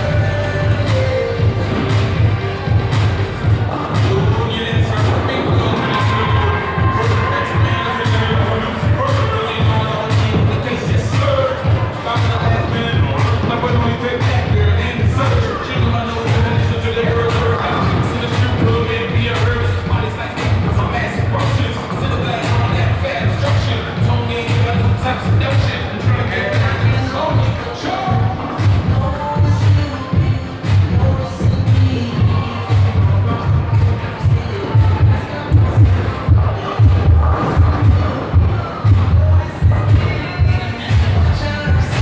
Calgary, AB, Canada - Century bowling
8 December 2015